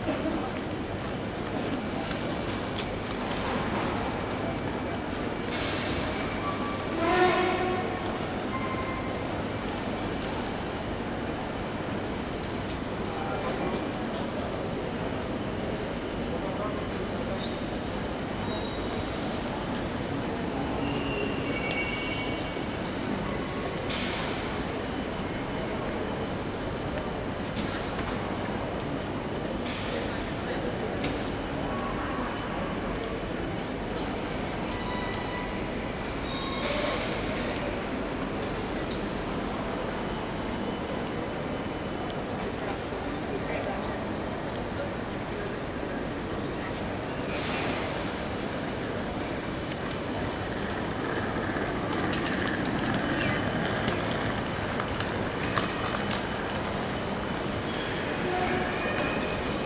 Train and security announcements and general background sounds.
Recorded on a Zoom H2n.
London, UK, 7 April 2018, ~10:00